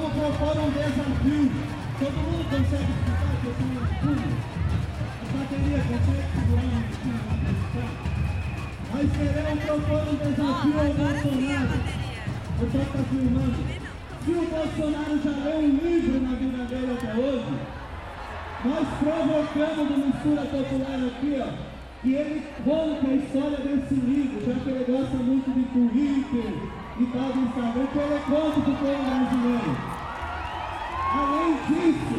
Av. Paulista - Bela Vista, São Paulo - SP, 01310-200, Brazil - 15M - Manifestação Pela Educação Pública - Livros Sim, Armas Não
Gravação da Manifestação 15M - Contra Cortes na Educação Pública Brasileira e contra a Reforma da Previdência. Gravação do orador dizendo Livros Sim Armas Não. Gravado com Zoom H4n - Mics internos - 120°
Reconding of 15M Public act against expense cut in education proposed by Jair Bolsonaro and against the Social Security Reform presented by Paulo Guedes. Recording of orator singing Yes Books, No Guns. Recorded on Zoom H4n - Internal Mics - 120º
15 May 2019